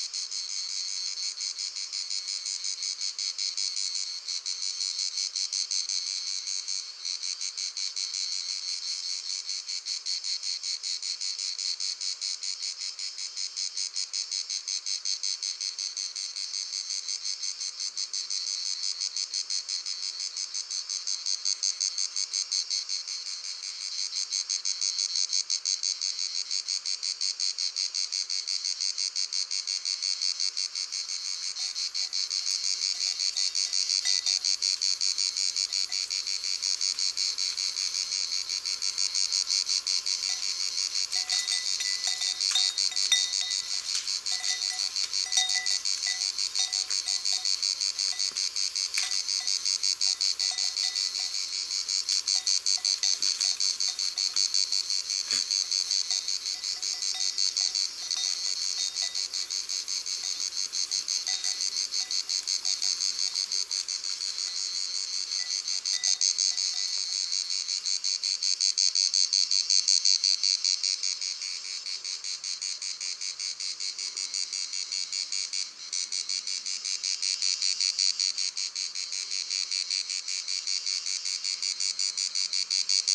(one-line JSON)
{"title": "sorgue, in the fields, crickets and bells", "date": "2011-08-26 16:09:00", "description": "In the fields nearby Sorgue on an early summer afternoon. The sound of crickets chnaging with a winf movemnt and the bells of two cows that stand nearby on a grass field.\nInternational topographic field recordings, ambiences and scapes", "latitude": "44.03", "longitude": "4.93", "altitude": "26", "timezone": "Europe/Paris"}